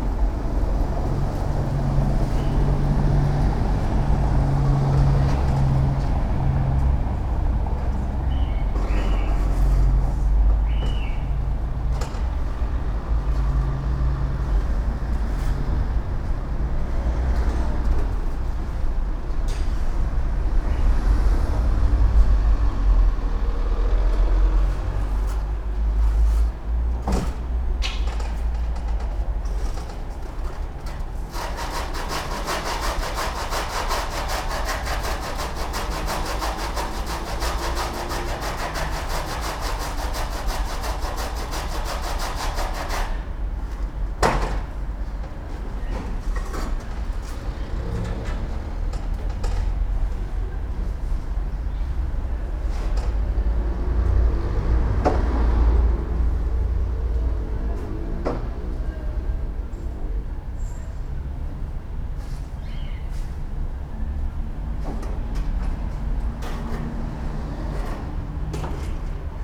Szewska, Srem - side street construction
construction workers renovating side of a building and installing insulation panels. i went towards this place intrigued by chirps of a bird that you can hear throughout the recording. a man walks up to me asking if a pet store is open on Saturday. (Roland R-07 internal mics)